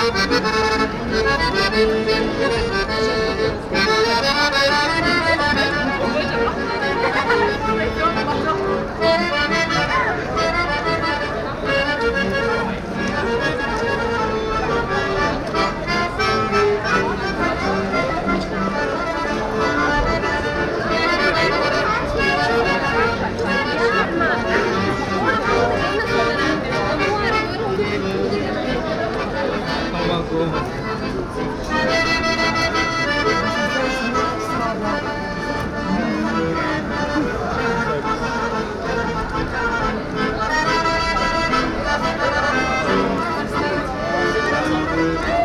In der Fussgängerzone. Der Klang eines Straßenmusikers, der Akkordeon spielt an einem sonnigen Frühlingsnachmittag. Im Hintergrund die belebte Straße.
At the city pedestrian area. The sound of a street musician playing accordeon on a sunny spring afternoon.
Projekt - Stadtklang//: Hörorte - topographic field recordings and social ambiences